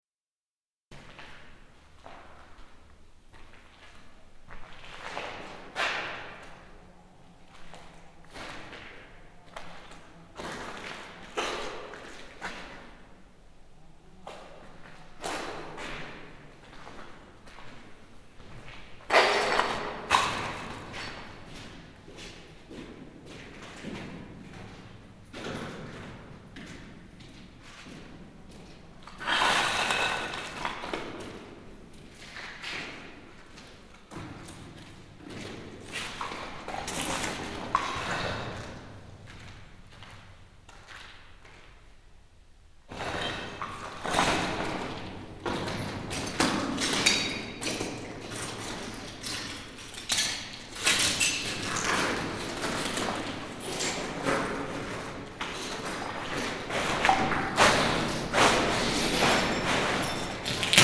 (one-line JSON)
{"title": "ruin of german ammunition factory in Ludwikowice Klodzkie, Poland", "date": "2009-10-17 11:11:00", "description": "inside a bunker, jamming with the materials on the ground", "latitude": "50.63", "longitude": "16.49", "altitude": "526", "timezone": "Europe/Berlin"}